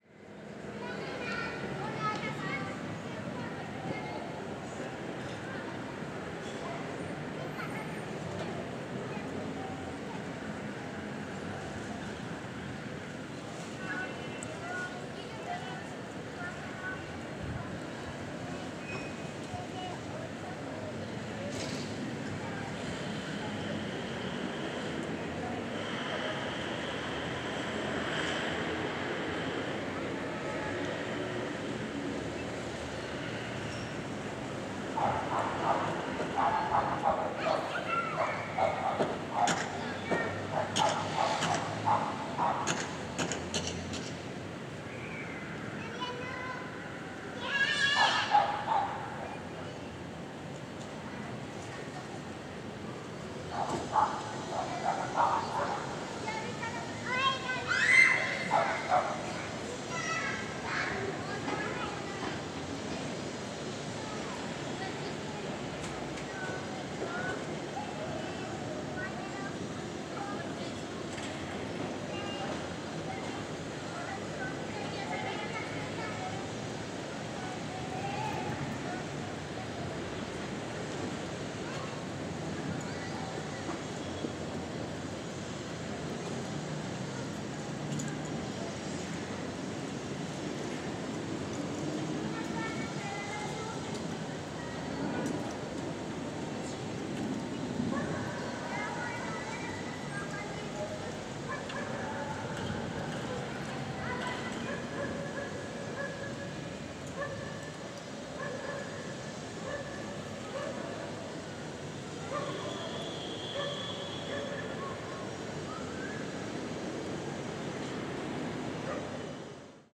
Cra., Bogotá, Colombia - Residential complex park in Suba, Bogota.
Recorded in the park of a residence. Wind sounds, traffic (motors, horns, buses) with construction sound (hammers, construction machinery in locative arrangements) in the background. also sounds of children and dogs.